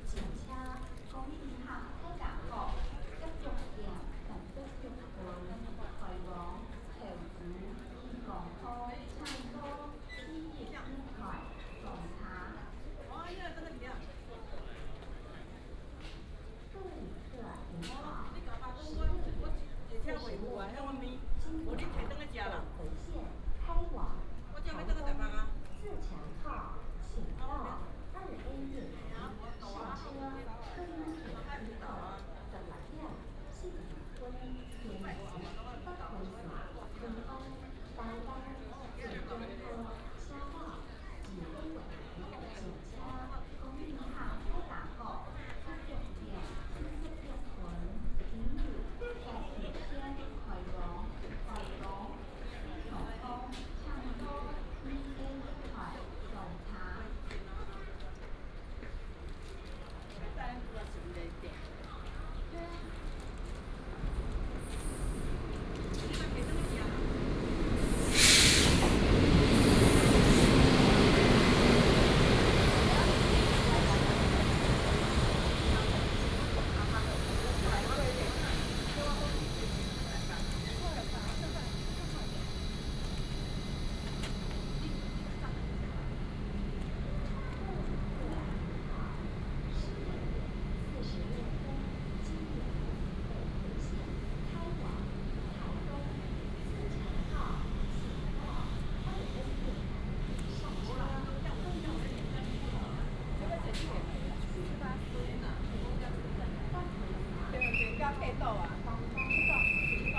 At the station platform, Train arrived
Taichung City, Taiwan, 24 March 2017, 16:43